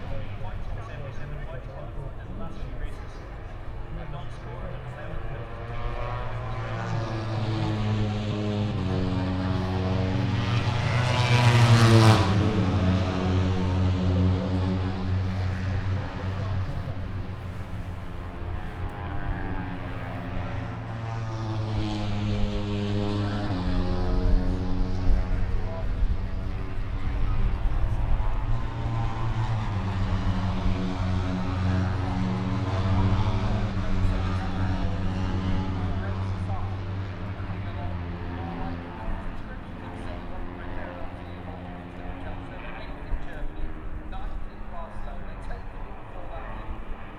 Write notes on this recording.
british motorcycle grand prix ... moto three free practice two ... dpa 4060s on t bar on tripod to zoom f6 ...